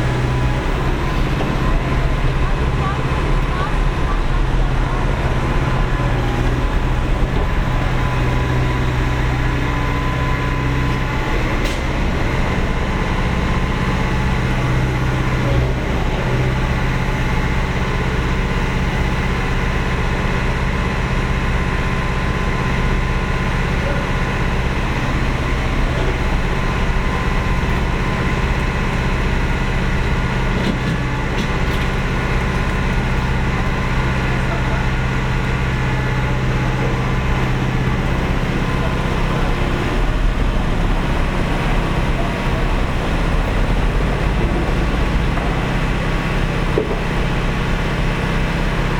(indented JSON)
{
  "title": "berlin: friedelstraße - the city, the country & me: sewer works",
  "date": "2013-08-20 16:29:00",
  "description": "two excavators in action\nthe city, the country & me: august 20, 2013",
  "latitude": "52.49",
  "longitude": "13.43",
  "altitude": "46",
  "timezone": "Europe/Berlin"
}